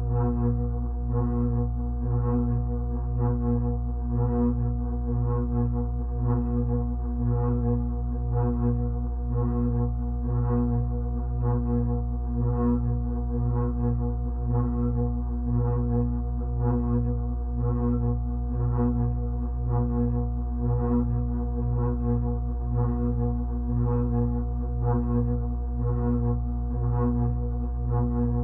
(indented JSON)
{
  "title": "South River City, Austin, TX, USA - Jardine's Ceiling Fan",
  "date": "2015-09-20 01:45:00",
  "description": "Recorded with a pair of JrF c-series contact mics and a Marantz PMD661",
  "latitude": "30.25",
  "longitude": "-97.74",
  "altitude": "169",
  "timezone": "America/Chicago"
}